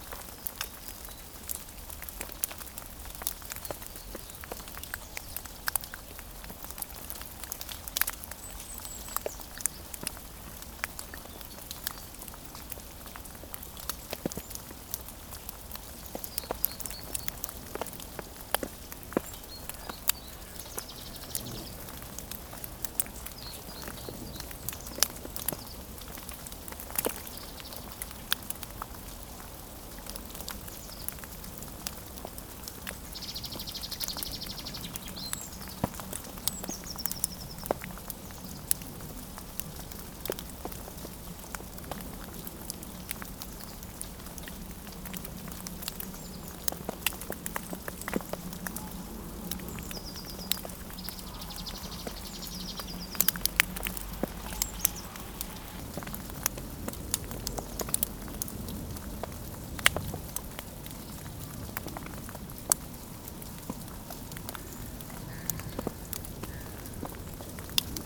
Court-St.-Étienne, Belgique - Snow is melting
On this very small road, snow is melting everywhere.